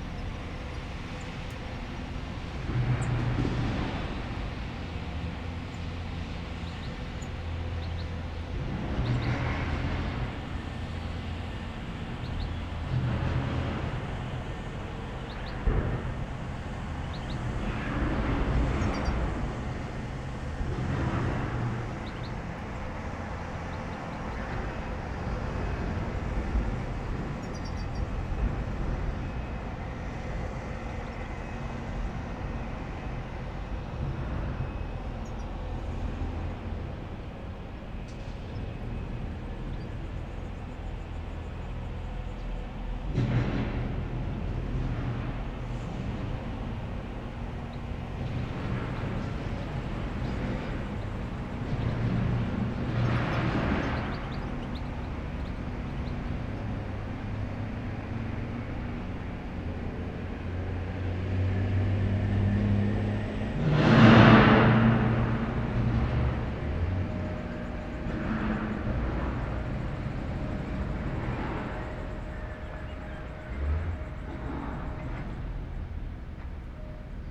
Lipica, Sežana, Slovenia - Sežana quarry
Quarry in Sežana. Microphones Lom Usi Pro.
Upravna enota Sežana, Slovenija, 8 July